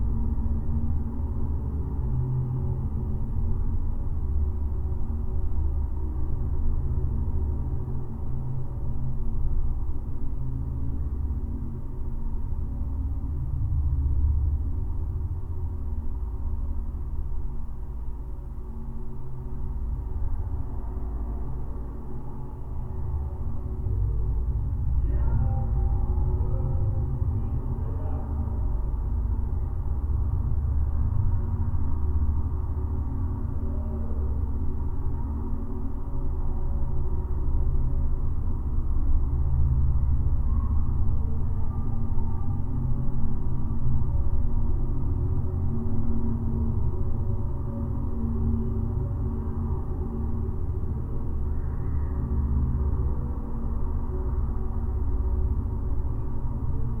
Molėtai, Lithuania, a sculpture
metallic sculpture of a fish on a bank. geophone recording: town in low frequencies
Utenos apskritis, Lietuva, 24 June